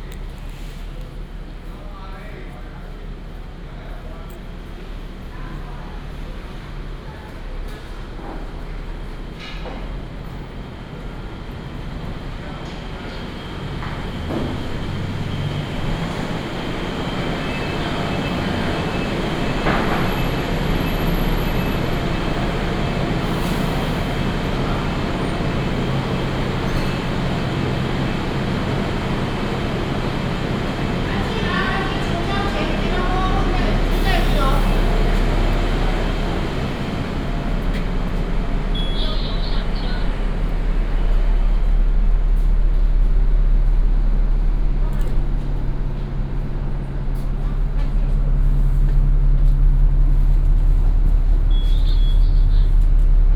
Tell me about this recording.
At the bus terminal, Old bus terminal, lunar New Year, Binaural recordings, Sony PCM D100+ Soundman OKM II